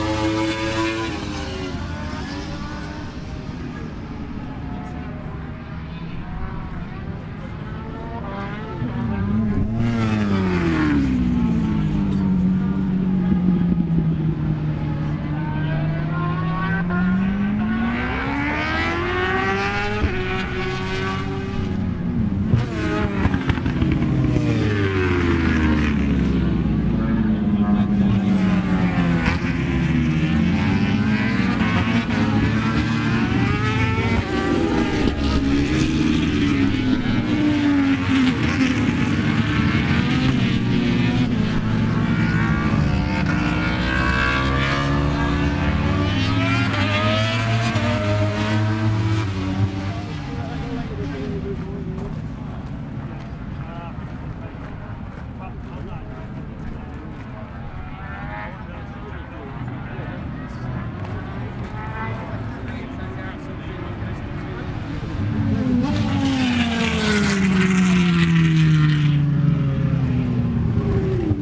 British Motorcycle Grand Prix 2003 ... Practice ... part one ... mixture of 990s and two strokes ...